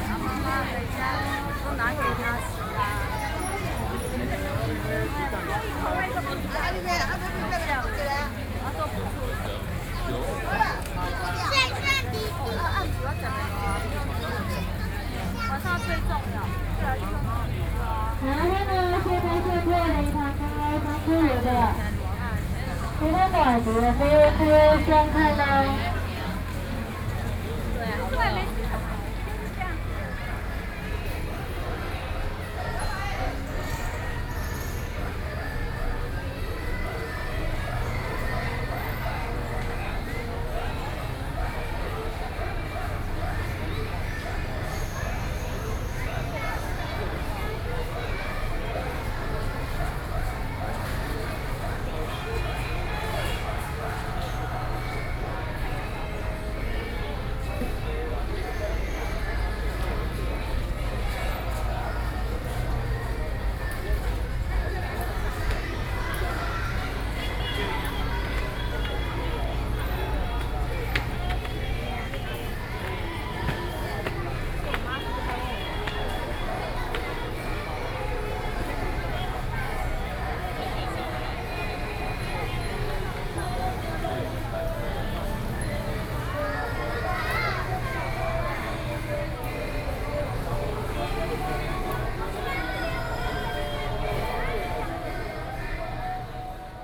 八里渡船頭, Bali Dist., New Taipei City - Holiday
Holiday at the seaside park, Various shops sound, Sound consoles
Binaural recordings, Sony PCM D50 +Soundman OKM II